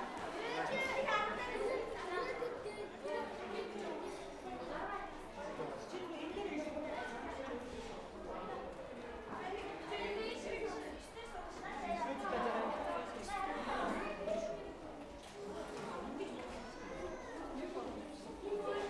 National amusement park, Ulaanbaatar, Mongolei - ape game
a game in a hall where by shooting toy apes rise on coluums
children's day, opening of the amusement parc